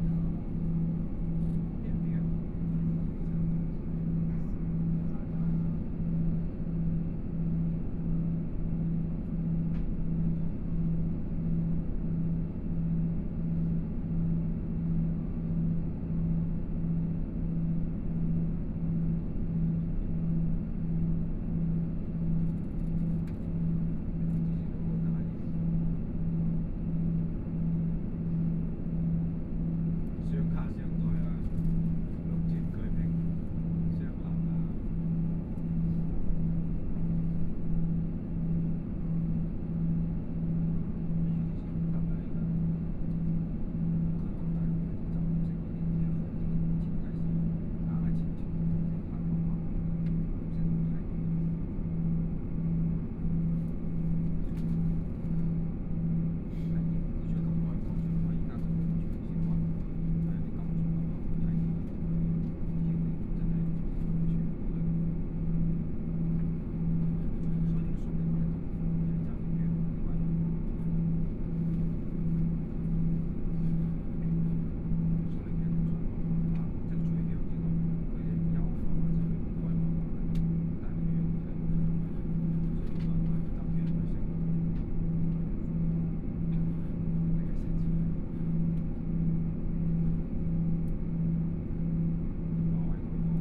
Noise inside the train, Train voice message broadcasting, Dialogue between tourists, Mobile voice, Binaural recordings, Zoom H4n+ Soundman OKM II